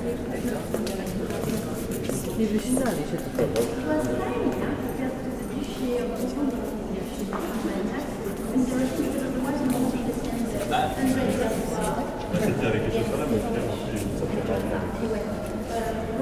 Indide the Rouen cathedral, with a group of tourists.